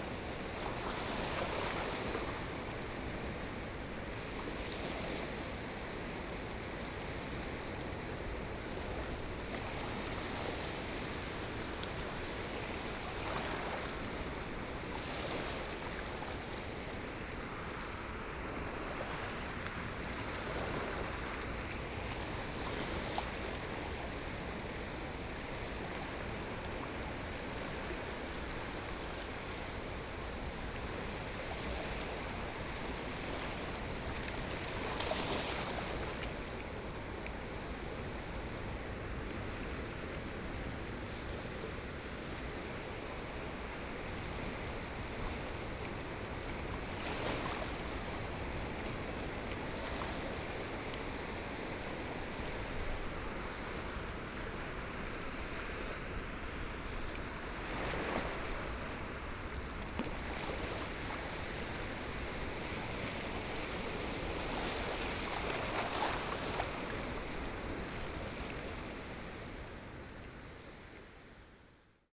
得子口溪, 縣頭城鎮大坑里 - Sound of the waves
Streams to the sea, Sound of the waves
Sony PCM D50+ Soundman OKM II
Yilan County, Taiwan, 26 July 2014, ~17:00